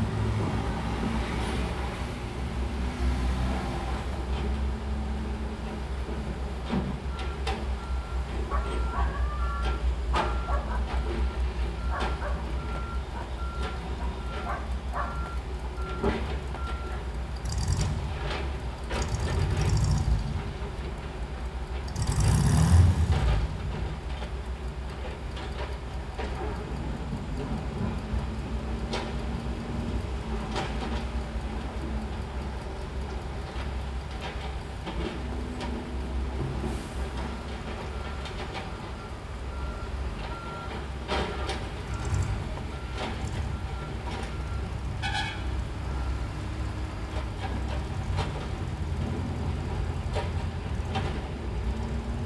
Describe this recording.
Recorded from the 5th floor of my apartment, in Barra, Salvador in Brazil. The seemingly never ending roadworks are in full swing in this World Cup 2014 host city. There are growing doubts that they will be finished in time. They say that all will be completed, but in the Brazilian way. With the paint still wet....The roadworks can be heard, as always, along with the omnipresent shore-break. The thunder is starting to roll in, as we are now in the rainy season. The ominous soundscape mirrors the growing unrest in the country, at this; "their" World Cup. Only 20 days to go.....